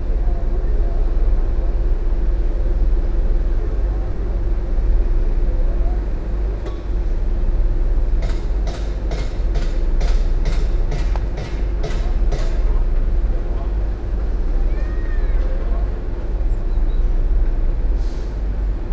housing complex, mateckiego street - new apartments
construction site at the Mateckiego street. Once a small and quiet housing complex grew over the years. New apartments are being build all around the area. Construction site sounds bother the inhabitants as the works start around five in the morning, also at weekends. Only the deer that live on the grassland nearby don't seem to care. Even when there are heavy thumps and noise from the site, they don't even wake up from their sleep. The construction impacts the inhabitants on many levels. Grass spots where people used to walk their dogs have been fenced to store the building materials. A makeshift soccer field has been removed as well. Usual sounds of kids playing soccer also therefore vanished from regular weekend soundscape. (roland r-07)
wielkopolskie, RP, 6 June 2019